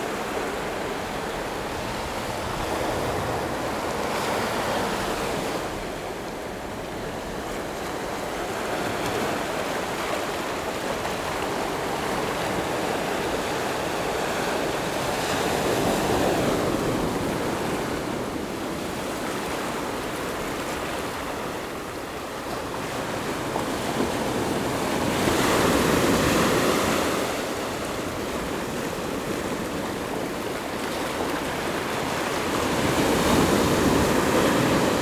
In the beach, Sound of the waves
Zoom H6 MS+ Rode NT4
Yilan County, Taiwan, 26 July, 15:34